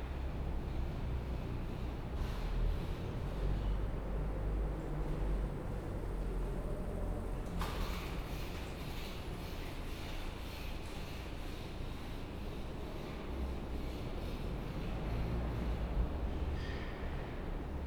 Kon. Maria Hendrikaplein, Gent, België - Fietsenstalling Gent St. Pieters
Underground bicycle parking, trains passing overhead